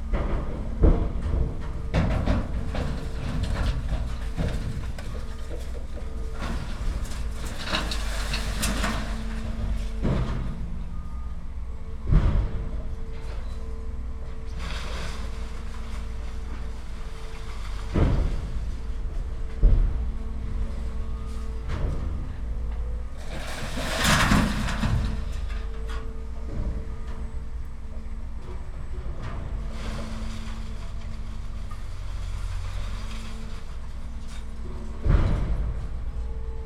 Maribor, Tezno, Ledina - scrapyard
scrapyard ambience, big machines are moving tons of metal
(SD702, DPA4060)